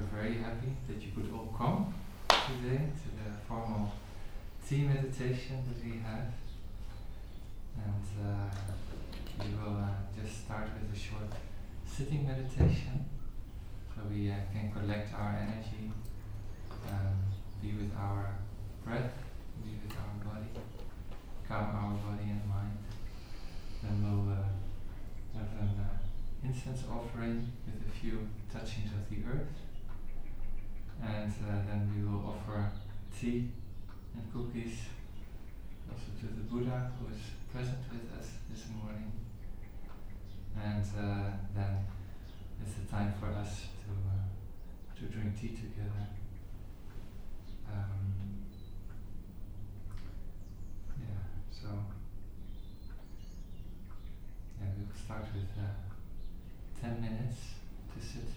Unnamed Road, Dorchester, UK - Formal Tea Meditation Pt1
A formal tea meditation hosted by Brothers Phap Xa who facilitates and Phap Lich who prepares the tea. Guests are invited into the meditation hall with the sound of the bell, they enter in single file and bow to the two hosts. Phap Xa welcomes the guests and the ceremony begins with a short period of sitting meditation marked by three sounds of the larger bell. (Sennheiser 8020s either side of a Jecklin Disk on SD MixPre6)